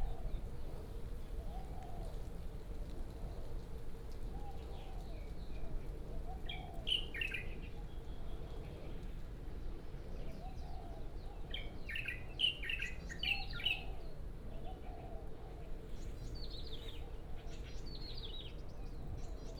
黑森林自行車道, 三條崙 Sihu Township - In the woods
Beside the woods, Wind Turbines, traffic Sound, Bird sound, Various bird tweets
Yunlin County, Taiwan, 8 May 2018